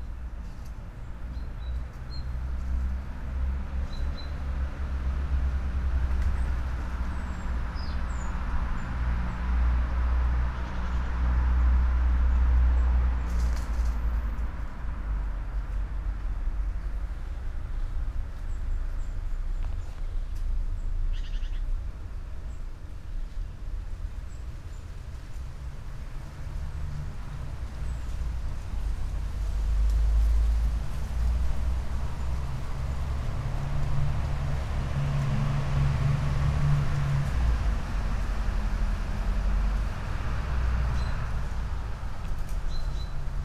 poems garden, Via Pasquale Besenghi, Trieste, Italy - sitting poem
sedeč v tišini mračne sobe, toplo mračne
z utripi, vmes med bližino teles
čuteči, drsiš po njem
trenutki utripajoče čutnosti
in ranjenosti
od drugega, od sebe
hočeš še bližje, nastavljaš telo besede
razpiraš čas, da dosegaš najtanjše tančice, v besedah
hočeš čutiti samost, v kateri počiva prašno jutro poletnega žvrgolenja
September 2013